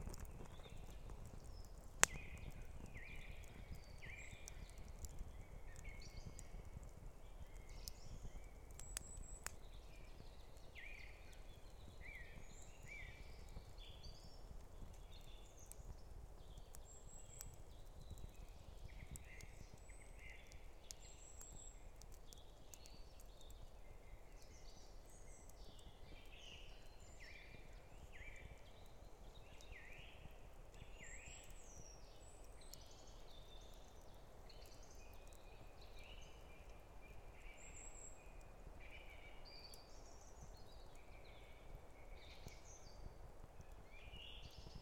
Voverynė, Lithuania, small fireplace
quarantine walk into wood. little fireplace in the evening
9 April 2020, 19:30